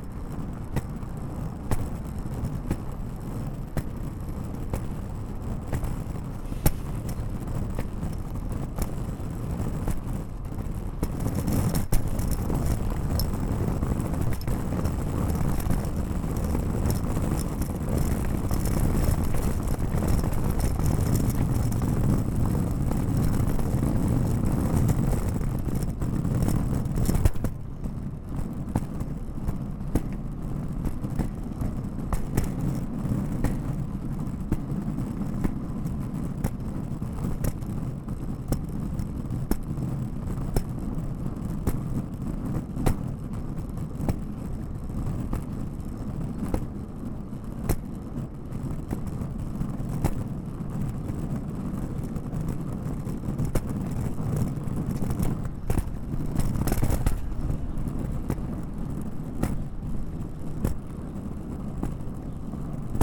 Spring Garden St, Philadelphia, PA, USA - USA Luggage Bag Drag #5
Recorded as part of the 'Put The Needle On The Record' project by Laurence Colbert in 2019.
Pennsylvania, United States, September 2019